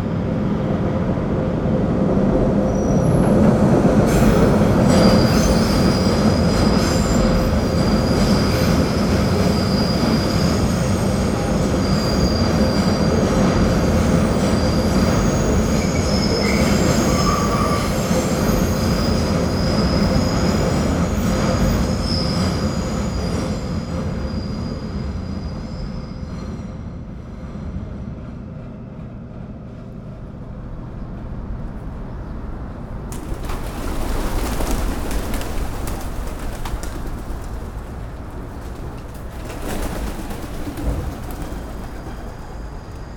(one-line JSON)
{"date": "2010-09-07 14:15:00", "description": "Trains and pigeons in Queens, New York.", "latitude": "40.75", "longitude": "-73.94", "altitude": "4", "timezone": "Europe/Berlin"}